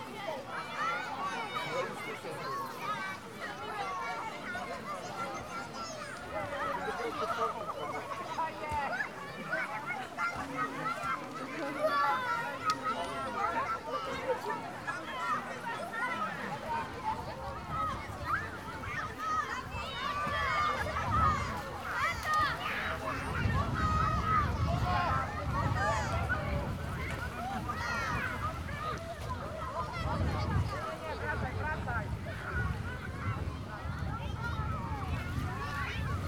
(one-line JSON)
{"title": "Smochowice, Poznan, at Kierskie lake - beach", "date": "2013-07-21 16:17:00", "description": "people relaxing on a small beach enjoying their time at lake in a scorching sun.", "latitude": "52.44", "longitude": "16.80", "altitude": "77", "timezone": "Europe/Warsaw"}